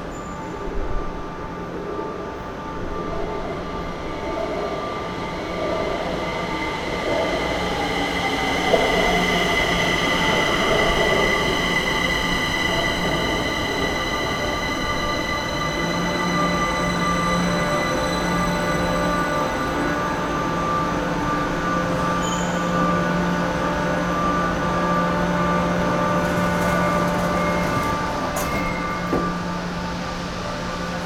Houjing Station, kaohsiung - Houjing Station
Houjing Station, In the MRT platform, Waiting for the train, Sony PCM D50
高雄市 (Kaohsiung City), 中華民國, 5 April 2012, ~14:00